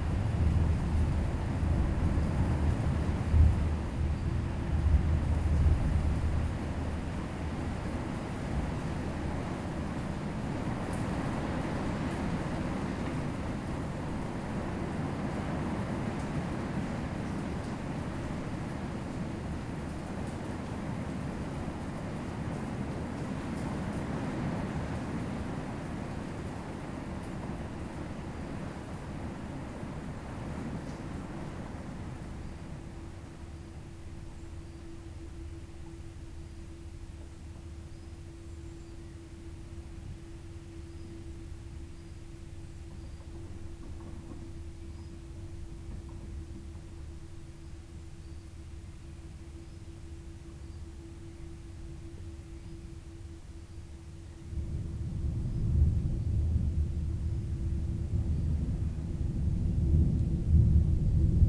Luttons, UK - inside church ... outside thunderstorm ...
inside church ... outside thunderstorm ... open lavalier mics clipped to a sandwich box ... background noise ... traffic ... etc ...